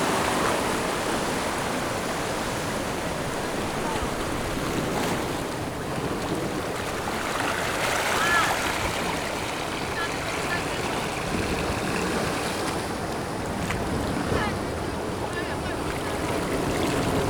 三貂角, New Taipei City - Sound of the waves
On the coast, Sound of the waves
Zoom H6 MS mic+ Rode NT4